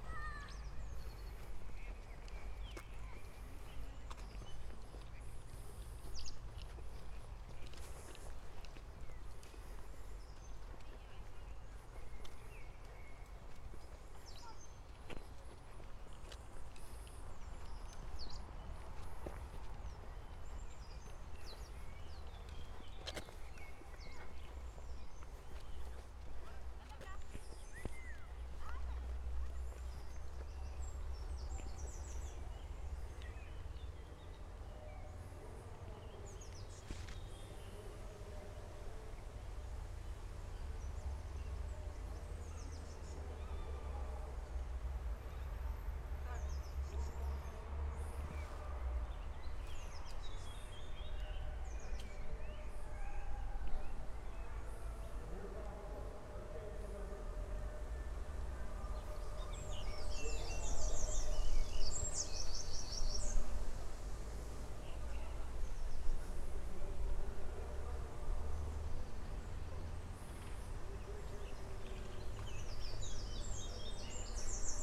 Lockdown SoundWalk @ the park, Lisboa, Portugal - Lockdown SoundWalk @ the park
Small soundwalk recording, can hear bikes, people talking and a mobile masse being transmitted outsite with Mozarts requiem as soundtrack. Recorded in bagpack situation (AB stereo config) with a pair of 172 primo capsules into a SD mixpre6.